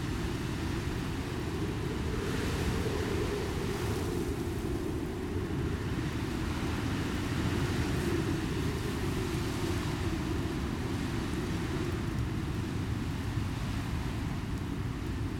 Troon, Camborne, Cornwall, UK - Howling Wind
Very windy day, the sound is the wind passing over electrical wires and through the hedgerows. Recorded with DPA4060 microphones and a Tascam DR100.